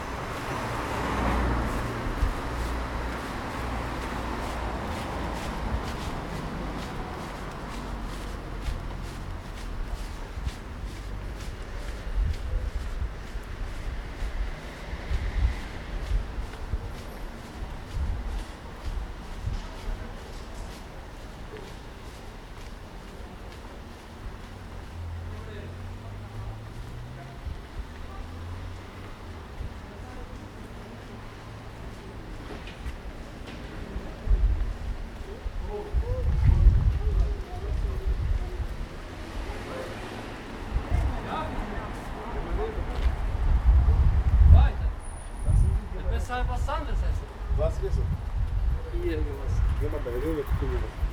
U, Mannheim - Kasimir Malewitsch walk, eight red rectangles
lunch break, no problem